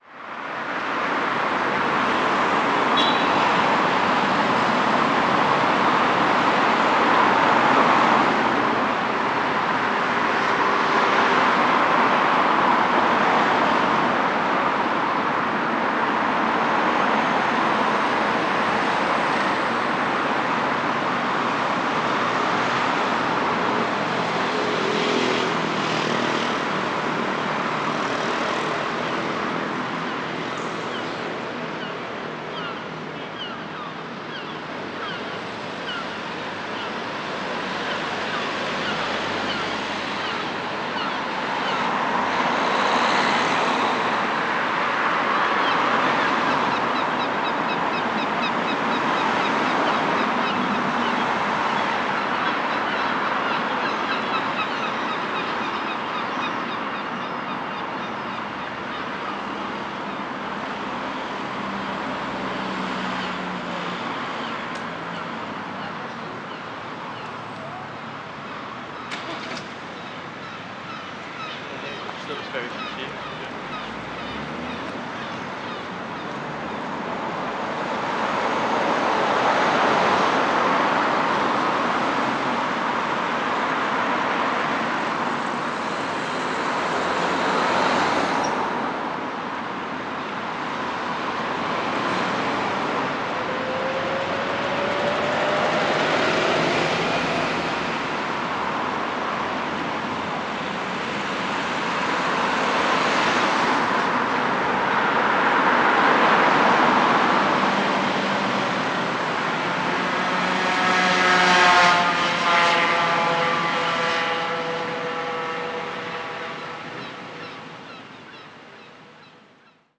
Shaftesbury Square, Belfast, UK - Shaftesbury Square-Exit Strategies Summer 2021
Recording of a major vehicle junction, traffic has significantly increased now that many establishments have reopened. Meaning, that there are many more vehicles back on the road. There are instances where you hear slight chatter from passing pedestrians.